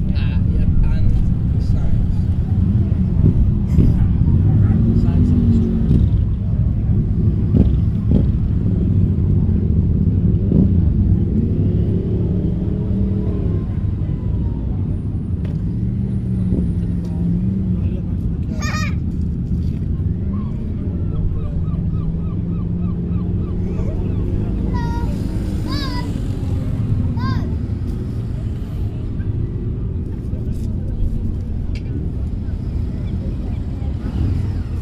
west bay dorset
sunday morning motorbike meet
Bridport, Dorset, UK